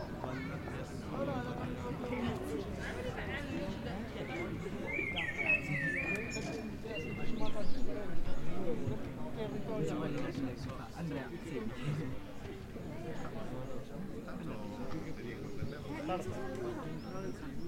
Perugia, Italia - guys chilling on the green grass
guys speaking, birds, traffic
[XY: smk-h8k -> fr2le]
21 May, ~17:00